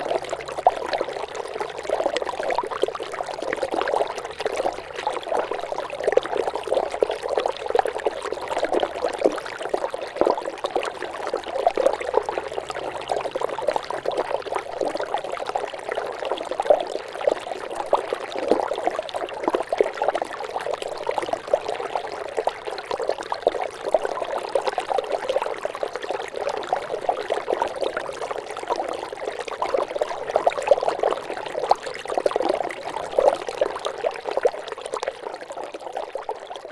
neoscenes: Mint Wash tributary snow melt
AZ, USA